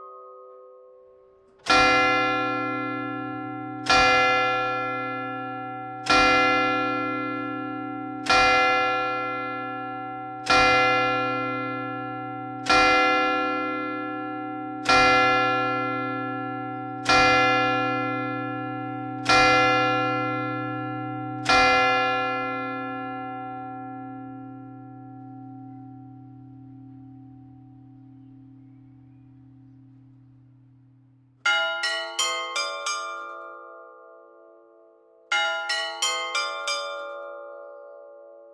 Grand Place, Harnes, France - Harnes - église St Martin - carillon automatisé
Harnes - église St Martin - Ritournelles automatisées et heures (10h et 11h)